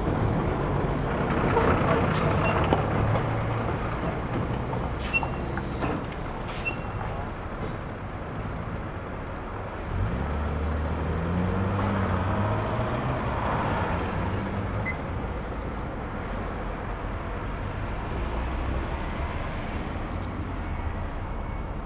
Berlin: Vermessungspunkt Maybachufer / Bürknerstraße - Klangvermessung Kreuzkölln ::: 10.08.2011 ::: 10:51